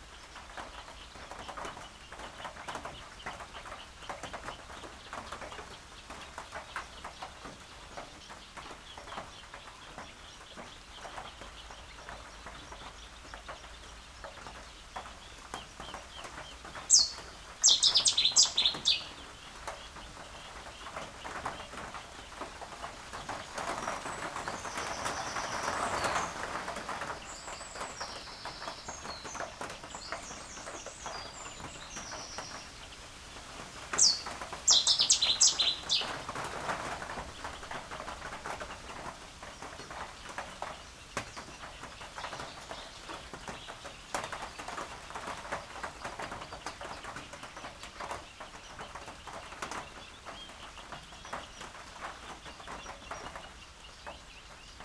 Meare, UK - Rain ... on reed beds ... bird hide ... and a cetti's warbler ...
Cetti's warbler in the rain ... rain falling on an open sided bird hide in front of a reed bed ... bird calls and song from ... Cetti's warbler ... Canada geese ... wren ... reed warbler ... little grebe ... coot ... crow ... bittern ... cuckoo ... greylag geese ... open lavalier mics clipped to a sandwich box ... lots of background noise ...